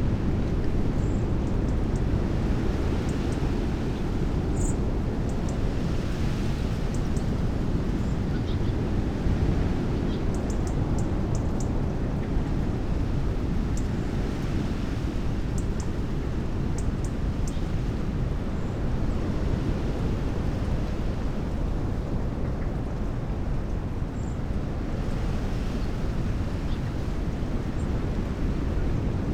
Visitor Centre, Cliff Ln, Bempton, Bridlington, UK - bird feeders at bempton ...
bird feeders soundscape at rspb bempton ... xlr sass to zoom h5 ... unattended ... time edited recording ... bird calls ... tree sparrow ... blackbird ... great tit ... blue tit ... goldfinch ... herring gull ... windy ...